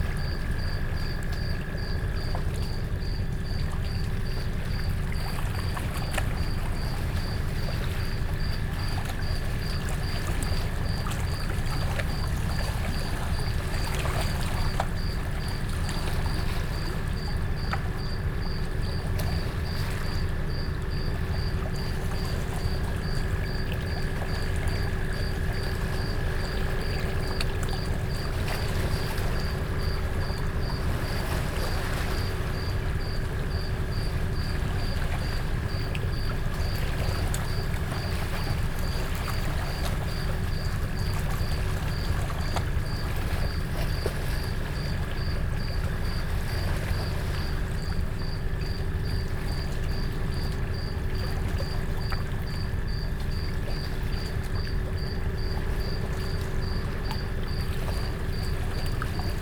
Lake shore, Kariba Lake, Sinazongwe, Zambia - last night before full-moon break...
last night of fishing before the moon change is always pretty busy...